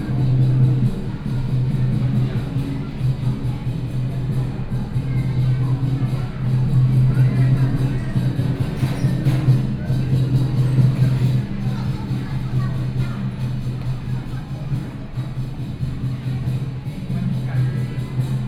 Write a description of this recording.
At the station next to the Community Carnival, Binaural recordings, Traditional percussion performances, Sony PCM D50 + Soundman OKM II